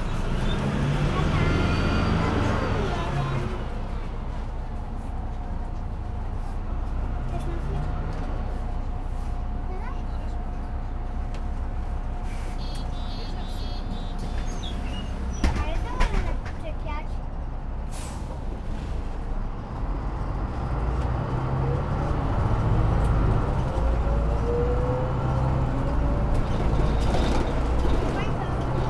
Poznan, Gorczyn, Glogowska Street, on the bus - bus ride towards Gorczyn bus depot
a short ride on an articulated bus, which cracks, rattles, squeal, grinds while it's moving and turning. conversations of passengers.
Poznan, Poland, 17 July 2013